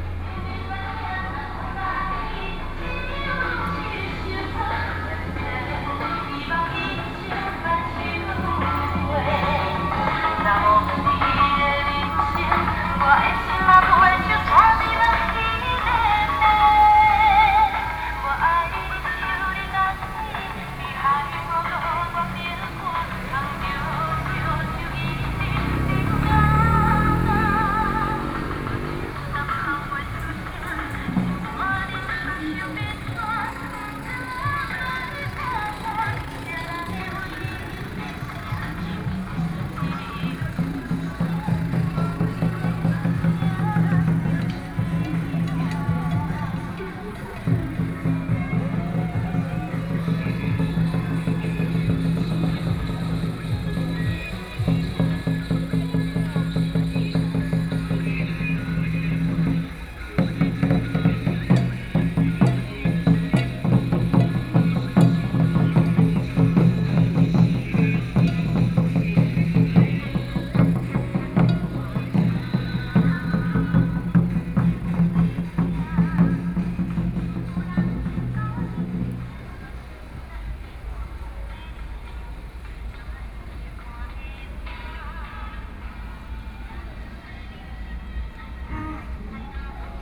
Xinmin Rd., 宜蘭市東門里 - Festival
Festival, Traffic Sound, At the roadside
Sony PCM D50+ Soundman OKM II